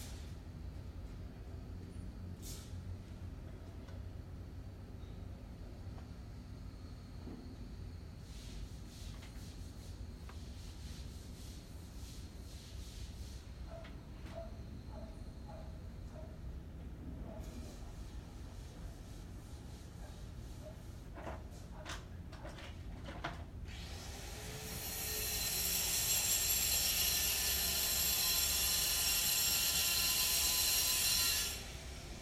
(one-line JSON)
{"title": "Estr. dos Índios - Bairro do Limoeiro, Arujá - SP, 07432-575, Brasil - Paisagem Sonora para projeto interdisciplinar de captação de áudio e trilha sonora", "date": "2019-04-30 08:26:00", "description": "Áudio captado com intuito de compor um paisagem sonora de um cena sonorizada em aula.\nPor ter sido captado em Arujá uma cidade com cerca de 85.000 mil habitantes nos traz o silêncio e a calmaria de uma cidade de interior, diferente da quantidade massiva de sons presentes na cidade de São Paulo durante todo o dia, no áudio o que nós recorda que estamos em uma metrópole são os sons de aviões, e carros ao longe passando na estrada, podemos ouvir também o latido constante de um cachorro em certos momento e pessoas fazendo suas tarefas diárias.", "latitude": "-23.41", "longitude": "-46.31", "altitude": "809", "timezone": "America/Sao_Paulo"}